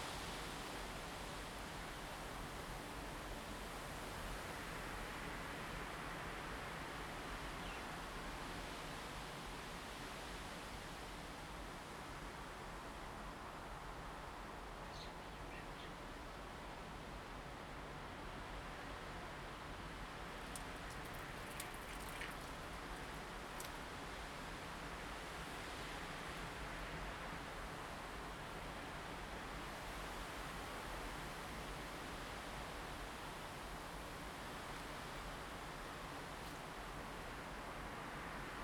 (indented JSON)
{
  "title": "Jinning Township, Kinmen County - Birds singing and wind",
  "date": "2014-11-03 08:15:00",
  "description": "Birds singing, Wind, In the woods\nZoom H2n MS+XY",
  "latitude": "24.48",
  "longitude": "118.32",
  "altitude": "19",
  "timezone": "Asia/Taipei"
}